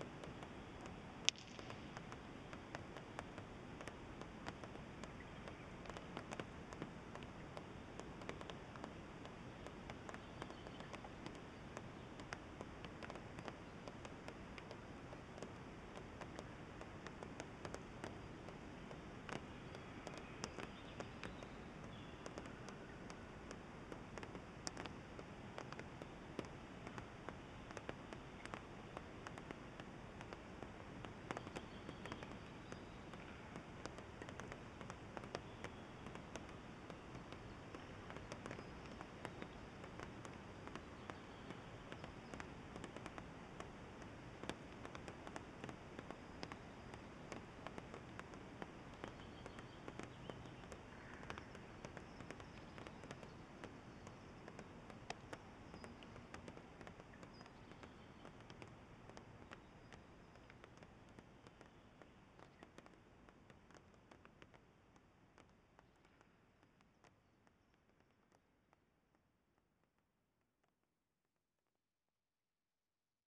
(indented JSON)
{
  "title": "Unnamed Road, Bremen, Germany - Dripping water",
  "date": "2020-05-12 15:00:00",
  "description": "The water dripping onto a wooden structure.",
  "latitude": "53.22",
  "longitude": "8.50",
  "altitude": "17",
  "timezone": "Europe/Berlin"
}